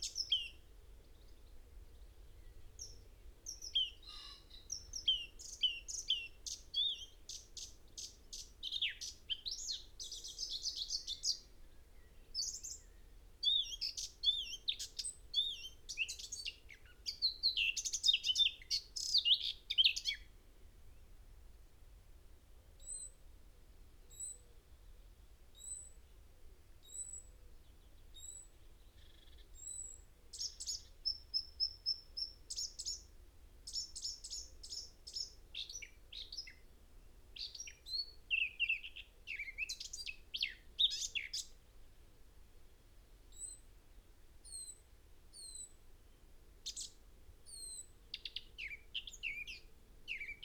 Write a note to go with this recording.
blackcap song ... dpa 4060s in parabolic to mixpre3 ... bird calls ... song ... from chaffinch ... wood pigeon ... goldfinch ... pheasant ... blackbird ... blue tit ... crow ... this sounded like no blackcap had heard before ... particularly the first three to five minutes ... both for mimicry and atypical song ...